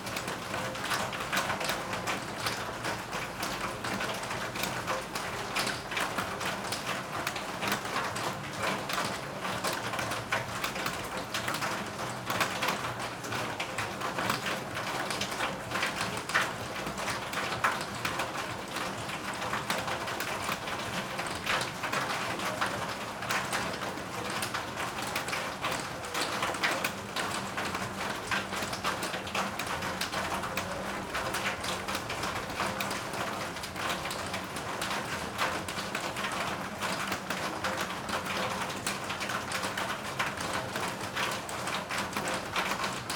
rain drops percussion ensemble near the gymnasium, Zitna ulica. it started to rain this day, after weeks of heat.
(PCM D-50)